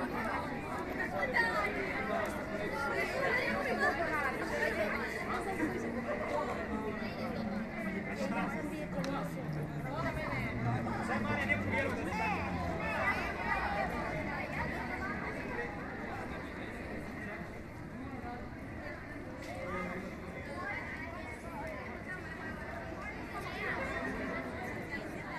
studentski centar
students center, outside of the entrance, in the framework of EBU workshop.
10 June 2010, 21:58